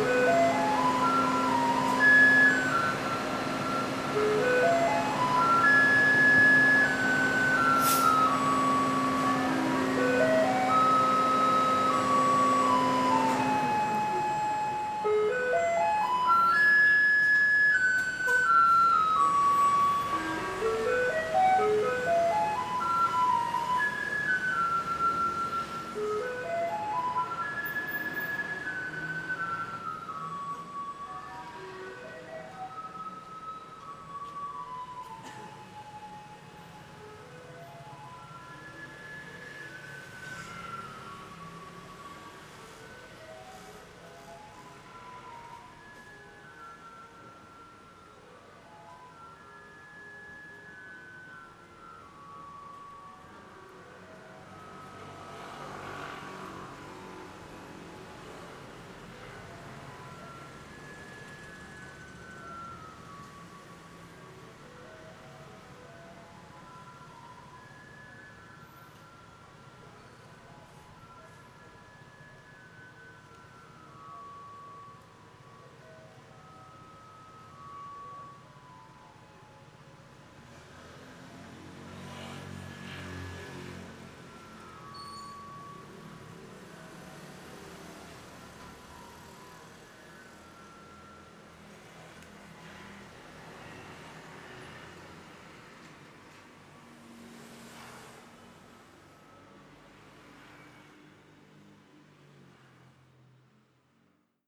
No., Zhongzheng West Road, Zhubei City, Hsinchu County, Taiwan - Garbage Truck Outside Claw-Machine Arcade
A garbage truck approaches and stops at the curb of the yellow claw-machine arcade, next door to Simple Mart on Zhongzheng West Rd. The truck produces the near-deafening melody, to alert local residents of its arrival. The truck's compactor is also activated. Stereo mics (Audiotalaia-Primo ECM 172), recorded via Olympus LS-10.